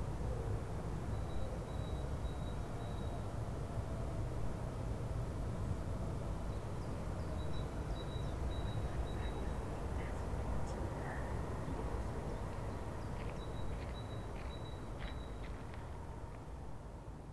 berlin: dorotheenstädtischer friedhof - the city, the country & me: dorotheenstadt cemetery, grave of herbert marcuse
singing bird at the grave of herbert marcuse
the city, the country & me: april 10, 2011